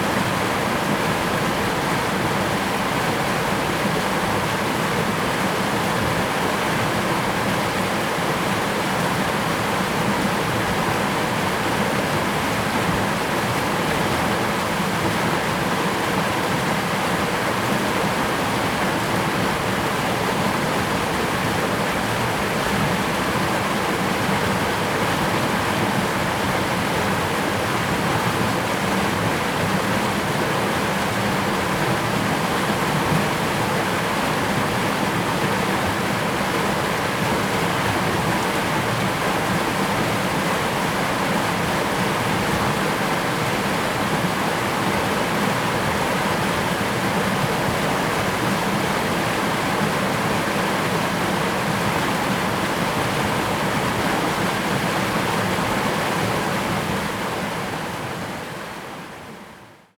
Irrigation waterway, The sound of water